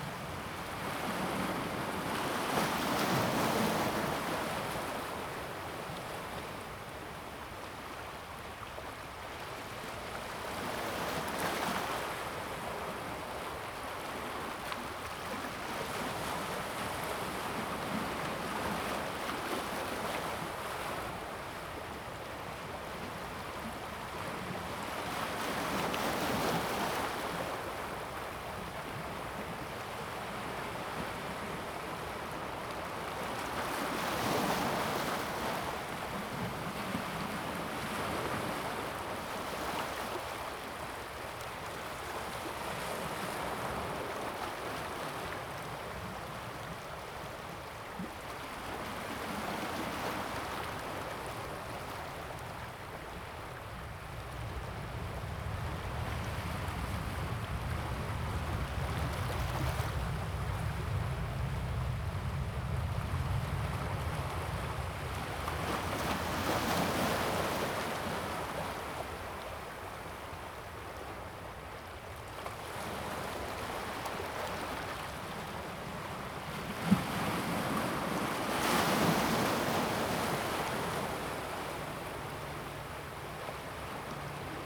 On the coast, Sound of the waves, Stream to the sea
Zoom H2n MS+XY
New Taipei City, Tamsui District, November 2016